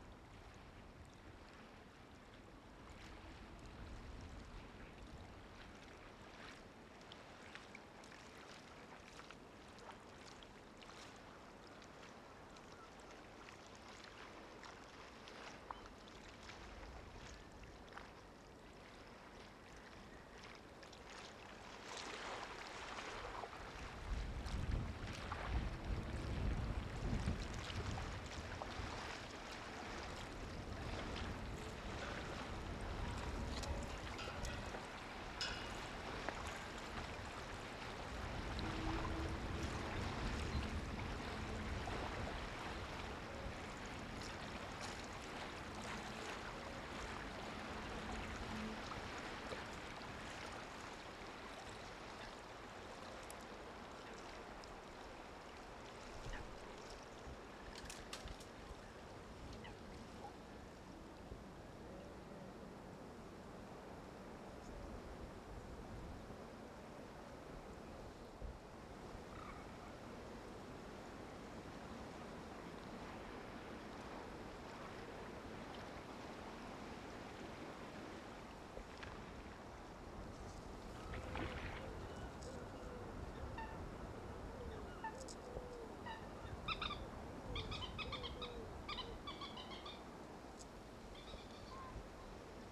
Sound mirrors at Dungeness - flooded quarry / waterfowl preserve - Denge sound mirrors - edit - 02apr2009
Whistling sound generated by holes in metal gate / swing bridge installed by English Heritage to protect the site from vandals.
Kent, UK